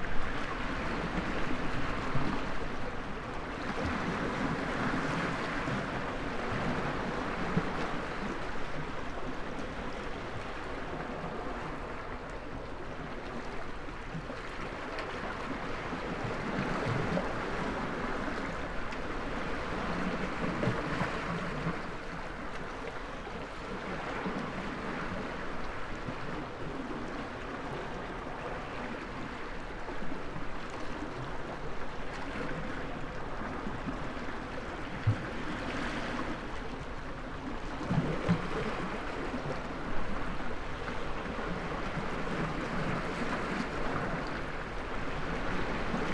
Pink Floyd Bay, Notia Rodos, Griechenland - Waves on the rocks at Pink Floyd Bay, Rhodos
Waves on the rocky shore. The bay is called Pink Floyd Bay by the locals. Some said it is because of the bizarre rock formations that resemble a picture on one of the bands Record sleeves, other rumours say that the band actually had some jam sessions on the beach. While probably none of this is true, the place still ist surely beautiful.Binaural recording. Artificial head microphone set up in the windshade of a rockstack about 5 Meters away from the waterline. Microphone facing east.Recorded with a Sound Devices 702 field recorder and a modified Crown - SASS setup incorporating two Sennheiser mkh 20 microphones.
Αποκεντρωμένη Διοίκηση Αιγαίου, Ελλάς